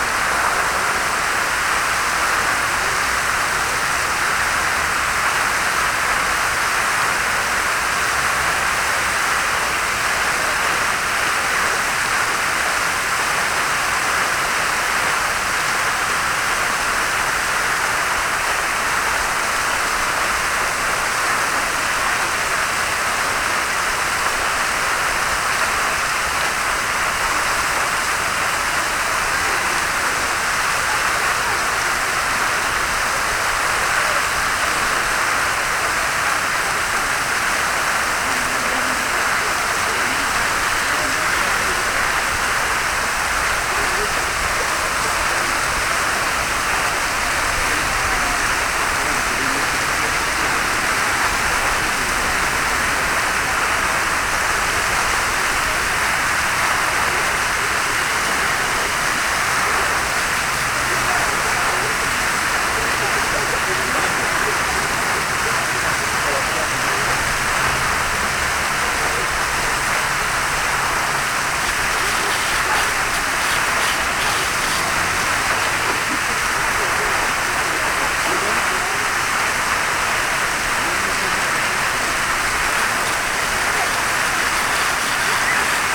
Śródmieście Północne, Warszawa, Pologne - Fontanna Park Swietokrzyski
Fontanna Park Swietokrzyski w Palac Kultury i Nauki, Warszawa
Warsaw, Poland